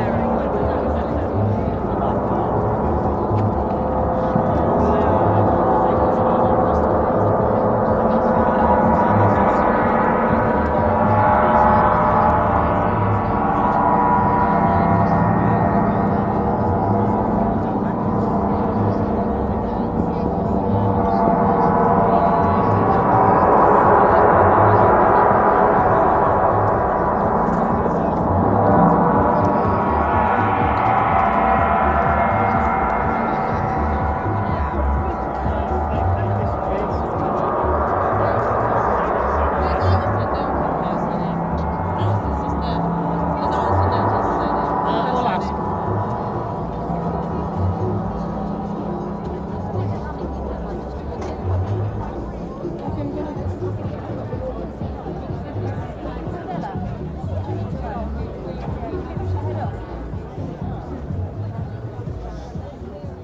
Large demonstrations often create strange surreal moments and the extinction rebels are particularly inventive. Three large Chinese gongs have been brought to Waterloo Bridge to add to the trees, plants, beautiful coloured paper insects and everything else. Their sound blends with the people, bass sound system and police helicopters overhead.